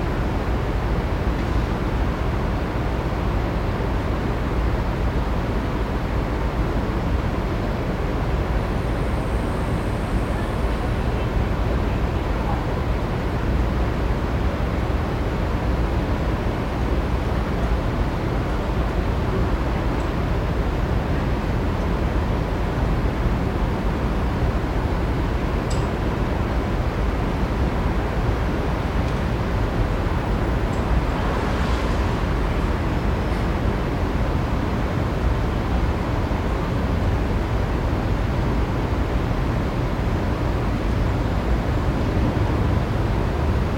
Rua Taguá - Night
Night in São Paulo in one business day. #brasil #SAOPAULO #CENTRO #Hospitais #BRAZIL
- Liberdade, São Paulo - SP, Brazil, 3 May, 00:10